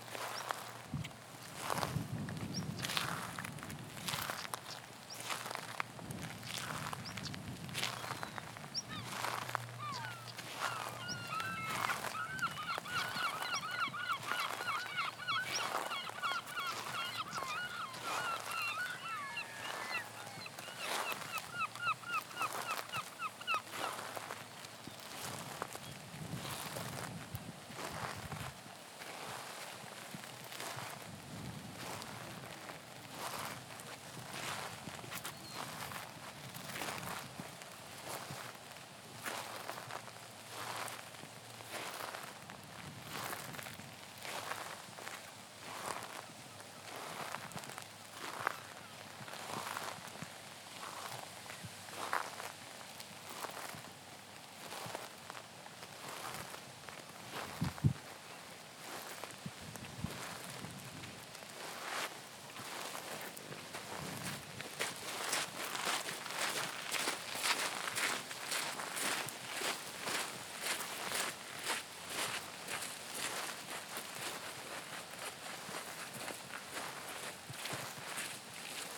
Unnamed Road, Prestatyn, UK - Gronant Beach Walking Meditation
Kinh Hanh (walking meditation) eastwards, towards the lighthouse, along the sand and gravel beach path. Recorded on a Tascam DR-40 using the on-board microphones as a coincident pair with windshield. Low-cut at 100Hz to reduce wind and handling noise.
8 August